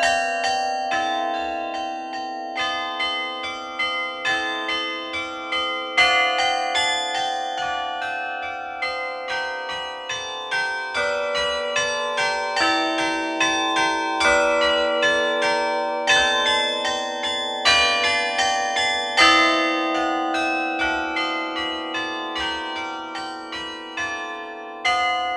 Beffroi de Bergues - Département du Nord
Maître carillonneur : Mr Jacques Martel
France métropolitaine, France, 13 June 2020, 11:00